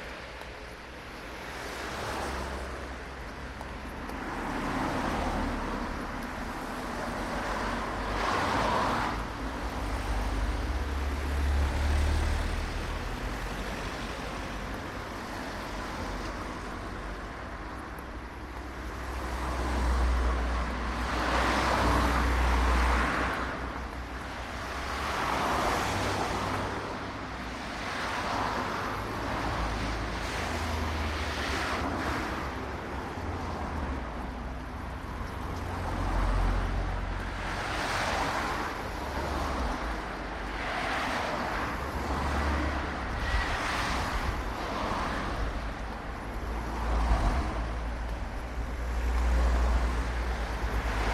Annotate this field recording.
I'm not sure of the exact time and date of this recording; it was in October some time in 2002. It was one of those really sunny but really cold days. I had a minidisc player and a microphone borrowed from the radio department at University. I was mapping the sounds of the site where I had lived on a road protest in 1997, starting with this sound - the sound of the traffic that thundered over our heads when we slept beside the road in our thin tree houses and in our tents.